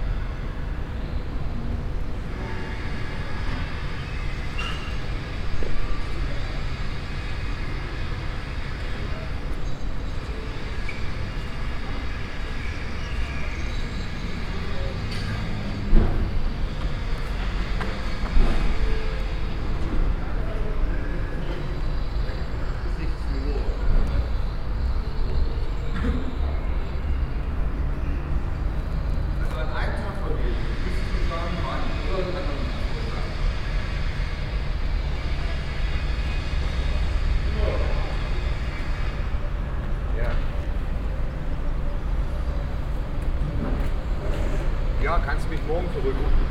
{
  "title": "essen, at VHS",
  "date": "2011-06-08 23:10:00",
  "description": "At the VHS on Ilse Menz Weg, as people pass by under the reverbing construction you partly hear the sound of instruments played by music students of the VHS.\nProjekt - Stadtklang//: Hörorte - topographic field recordings and social ambiences",
  "latitude": "51.45",
  "longitude": "7.01",
  "altitude": "84",
  "timezone": "Europe/Berlin"
}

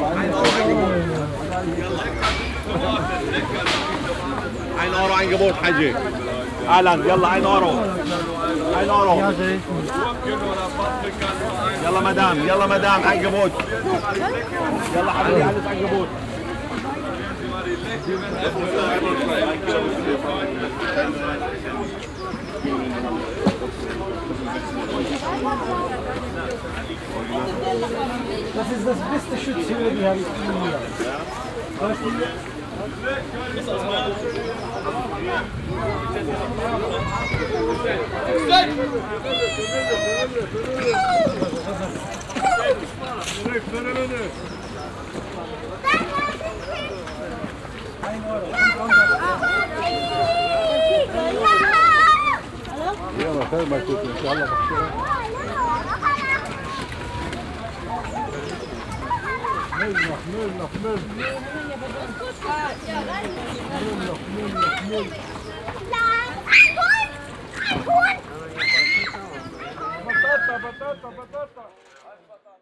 {
  "title": "Nordviertel, Essen, Deutschland - essen, unversity, saturday fleemarket",
  "date": "2014-04-19 14:30:00",
  "description": "Auf dem Universitätsgelände während des samstäglichen Flohmarkts. Verkäuferstimmen, Standmusik und die Klänge vieler Stimmen und Sprachen.\nAt the university during the weekly saturday fleemarket. The sounds of many voices in several languages.\nProjekt - Stadtklang//: Hörorte - topographic field recordings and social ambiences",
  "latitude": "51.47",
  "longitude": "7.00",
  "altitude": "57",
  "timezone": "Europe/Berlin"
}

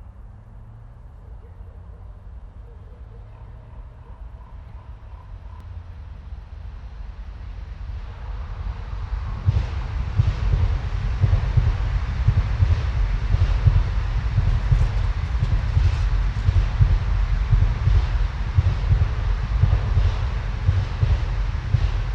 {
  "title": "Rain, trains, clangy bells, autumn robin, ravens, stream from the Schöneberger Südgelände nature reserve, Berlin, Germany - Train thumps and crackles",
  "date": "2021-11-28 07:44:00",
  "description": "Early morning just after sunrise. A cold and clear Sunday, slightly frosty. The atmosphere is still, the rain has stopped, wildlife is silent, the city very distant. Every two or three minutes the quiet is punctuated by powerful train moving fast. Some seem to leave a trail of harsh sharp crackling in their wake. I've not heard this sound before and don't know what it is - maybe electrical sparks on icy cables.",
  "latitude": "52.46",
  "longitude": "13.36",
  "altitude": "45",
  "timezone": "Europe/Berlin"
}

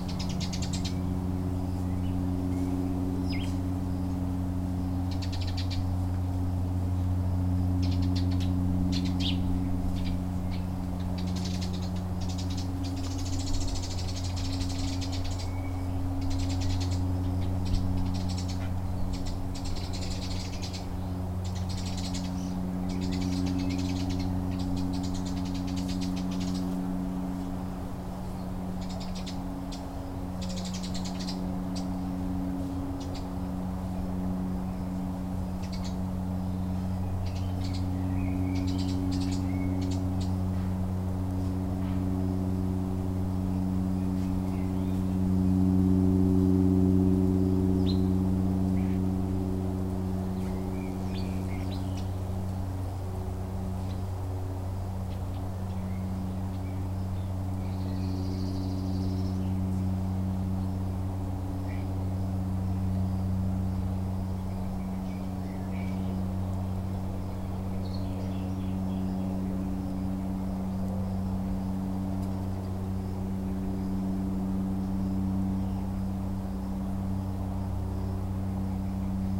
{
  "title": "Gavassa, Ca Azzarri",
  "date": "2010-05-01 14:41:00",
  "description": "WDL, Gavassa, Tenuta Ruozzi, Reggio Emilia, Emilia Romagna, Italy, Aerodrome, plane, noise",
  "latitude": "44.70",
  "longitude": "10.71",
  "altitude": "43",
  "timezone": "Europe/Rome"
}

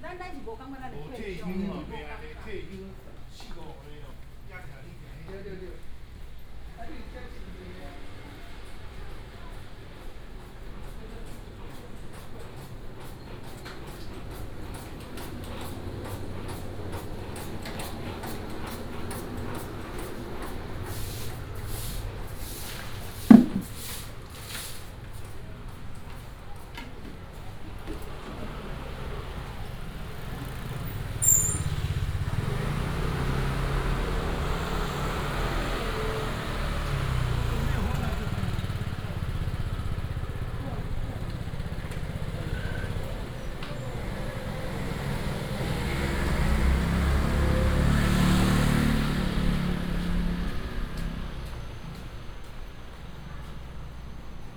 {
  "title": "新竹中央市場, Hsinchu City - the traditional market",
  "date": "2017-01-16 08:48:00",
  "description": "Walking in the traditional market inside, Traffic Sound",
  "latitude": "24.80",
  "longitude": "120.97",
  "altitude": "29",
  "timezone": "GMT+1"
}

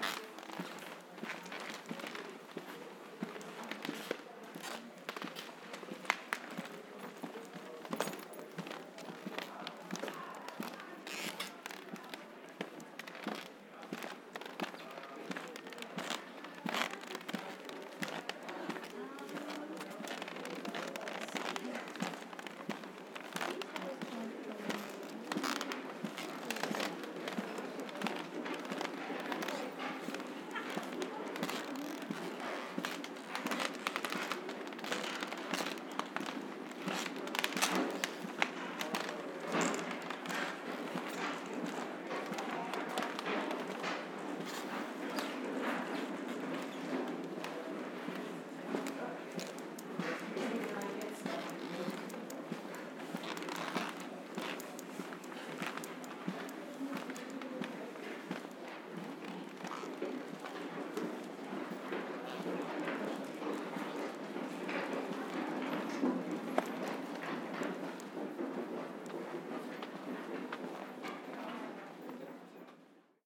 {
  "title": "W 35th St, New York, NY, USA - Squeaky hardwood floor at Macy's",
  "date": "2022-02-26 16:10:00",
  "description": "Squeaky sounds from an old hardwood floor at Macy's.\nRecording made on the 9th floor.",
  "latitude": "40.75",
  "longitude": "-73.99",
  "altitude": "17",
  "timezone": "America/New_York"
}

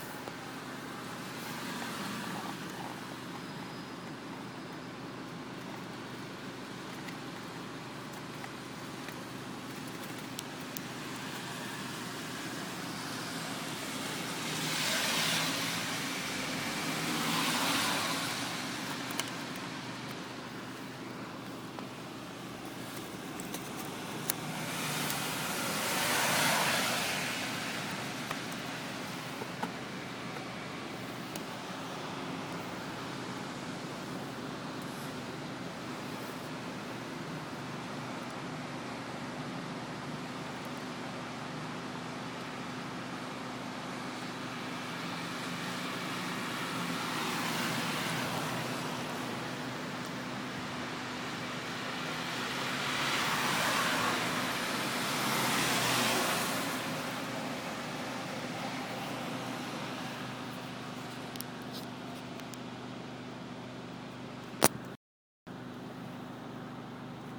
{
  "date": "2014-01-09 18:49:00",
  "description": "Wait/Listen #2 (09.01.2014/18:49/Rue Notre-Dame/Luxembourg)",
  "latitude": "49.61",
  "longitude": "6.13",
  "altitude": "304",
  "timezone": "Europe/Luxembourg"
}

